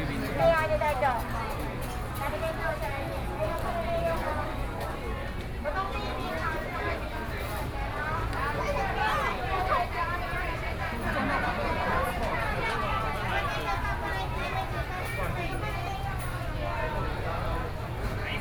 Gongyuan Rd., Luodong Township - Night Market
walking in the Street, walking in the Night Market, Traffic Sound, Various shops voices, Tourist